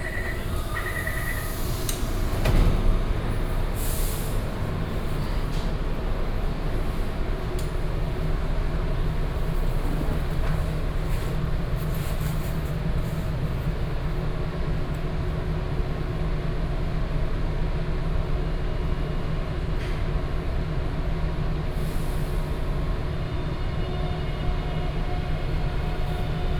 Haishan Station, New Taipei Cuty - In the station platform
In the station platform
Binaural recordings
Sony PCM D50 + Soundman OKM II
New Taipei City, Taiwan, 17 June 2012